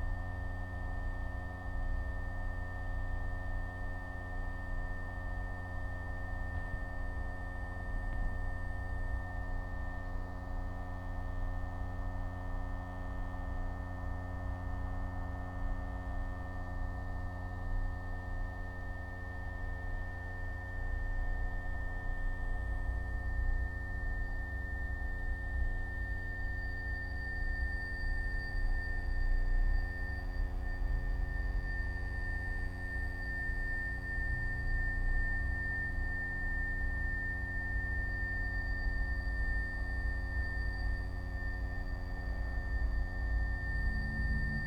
{"title": "desk, mladinska, maribor - tea cup, wire", "date": "2015-11-03 11:59:00", "latitude": "46.56", "longitude": "15.65", "altitude": "285", "timezone": "Europe/Ljubljana"}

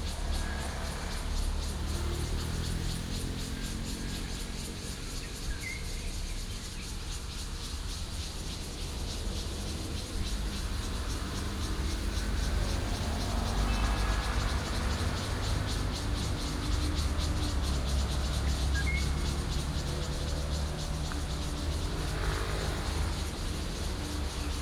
{"title": "Longxing Rd., Zhongli Dist. - Birds and Cicada", "date": "2017-07-10 16:53:00", "description": "Birds and Cicada sound, At the corner of the road, Traffic sound", "latitude": "24.93", "longitude": "121.24", "altitude": "161", "timezone": "Asia/Taipei"}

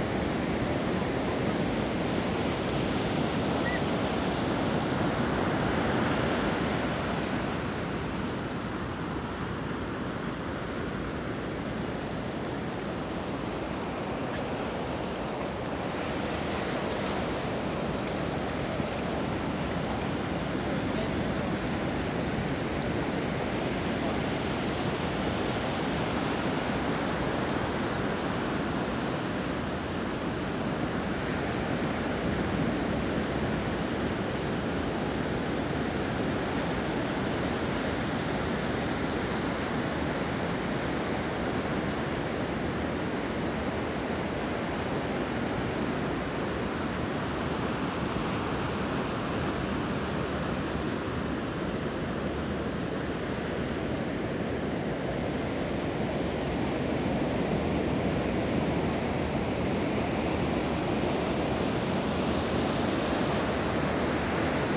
{"title": "頭城鎮港口里, Yilan County - At the beach", "date": "2014-07-07 11:40:00", "description": "Sound of the waves, Very hot weather\nZoom H6+ Rode NT4", "latitude": "24.87", "longitude": "121.84", "timezone": "Asia/Taipei"}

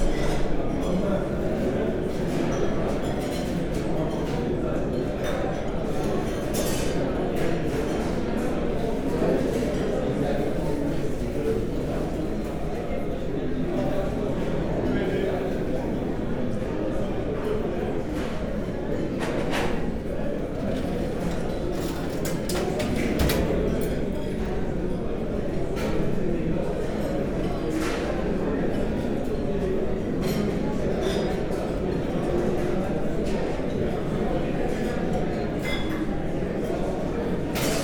{"title": "Quartier du Biéreau, Ottignies-Louvain-la-Neuve, Belgique - Univeristy restaurant place des Wallons", "date": "2016-03-11 13:55:00", "description": "The noisy ambience of an university restaurant. Students can find here cheap but good foods.", "latitude": "50.67", "longitude": "4.62", "altitude": "128", "timezone": "Europe/Brussels"}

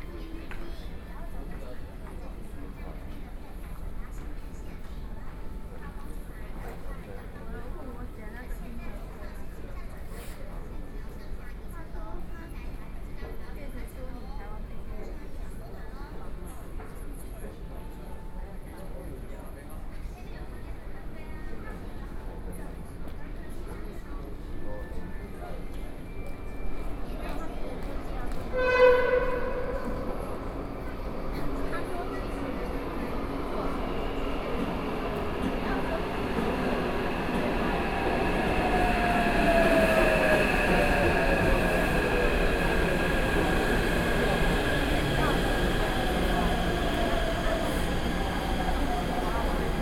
{"title": "Minquan West Road Station, Taipei City - waiting", "date": "2012-10-05 15:04:00", "latitude": "25.06", "longitude": "121.52", "altitude": "13", "timezone": "Asia/Taipei"}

